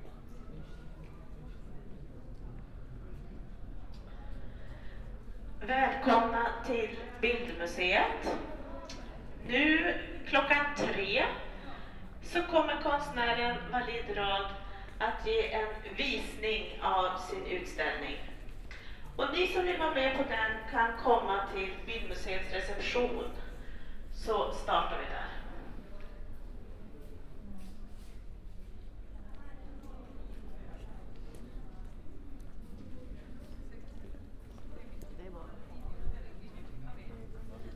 Bildmuseet (Art gallery, PA announcement)
19 February 2011, ~14:00